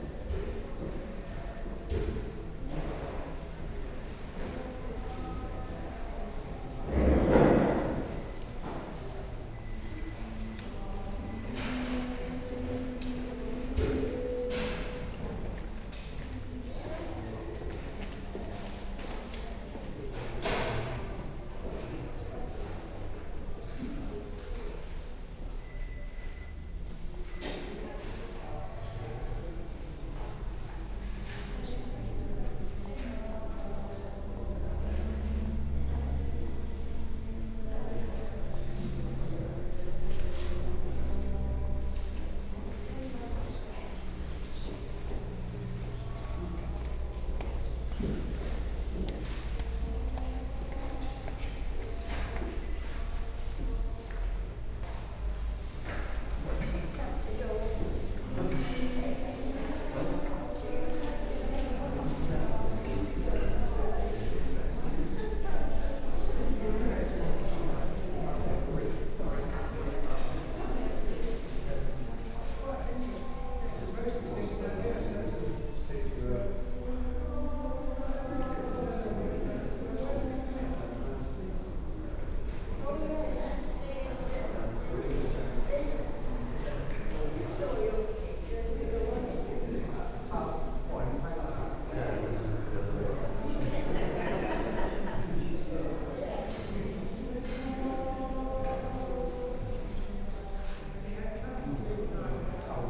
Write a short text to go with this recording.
Ten minute meditation in St Marys Minster Church. Parishioners chat as they leave the eucharist service, a till bleeps as Christmas cards are sold for charity on one side of the nave. On the other side, tea and biscuits are offered to visitors (Spaced pair of Sennheiser 8020s with SD MixPre6).